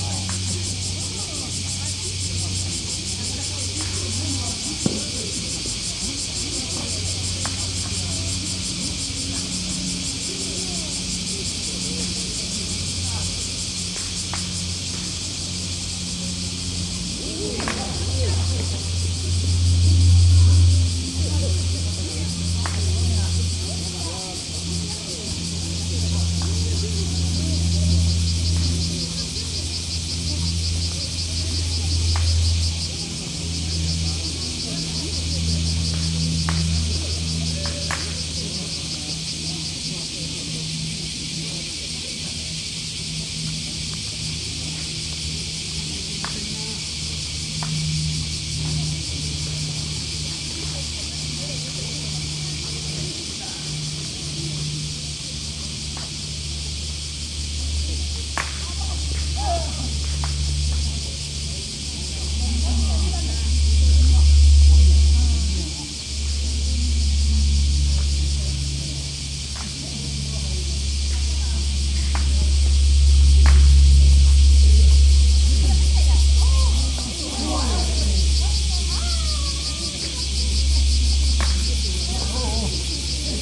Kojimacho, Moriyama, Shiga Prefecture, Japan - Hatonomori Park in Summer
Cicadas singing and people playing ground golf in Hatonomori Park on a host summer day.